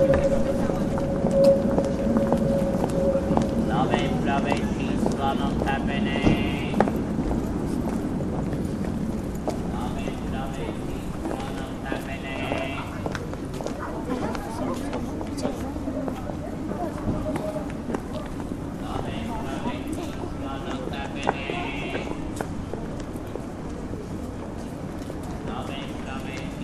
Bratislava I Slovenská republika - vendor of bratislava's big issue 'nota bene'
Actually this guy used to be a well known street vendor of all kinds of newspapers in Bratislava, well known especially for his characteristic chant.
10 November 2012, ~19:00